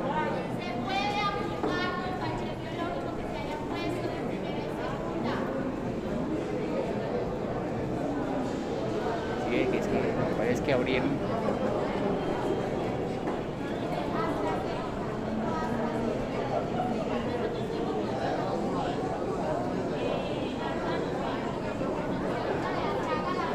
Cl., Medellín, Antioquia, Colombia - Parqueadero Los Molinos
Puesto de vacunación en el parqueadero.
Sonido tónico: Enfermera dando comunicado, personas hablando.
Señal sonora: Pasos, risas.
Se grabó con el micrófono de un celular.
Tatiana Flórez Ríos- Tatiana Martinez Ospino - Vanessa Zapata Zapata